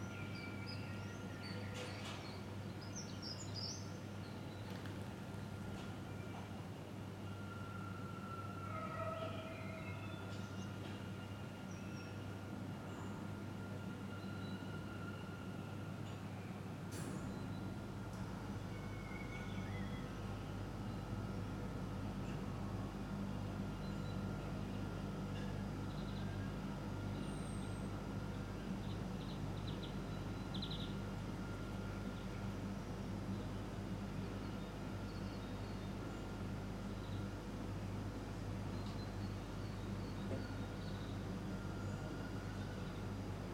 Lange Winkelhaakstraat, Antwerpen, Belgium - Morning ambience.
Calm morning in Antwerpen. Bird songs, city noises, sirens wailing in the distance, air conditioning and bell sounds.
Recorded with a Sound Devices MixPre-6 and a pair of stereo LOM Usi Pro.
Vlaanderen, België / Belgique / Belgien, 19 May